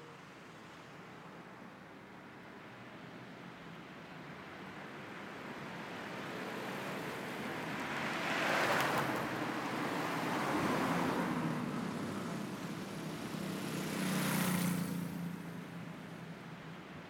Cl. 75 #28:97, Bogotá, Colombia - little busy environment Bogota
This place is a sidewalk path in a middle stratum neighborhood, located in Bogotá. This place has a little crowded environment where you can hear Cars and motorcycles move from one place to another and it gets pretty close to the microphone.
You can also hear in the distance some dog barking and birds. The audio was recorded in the afternoon, specifically at 8 pm. The recorder that we used was a Zoom H6 with a stereo microphone and a xy technique.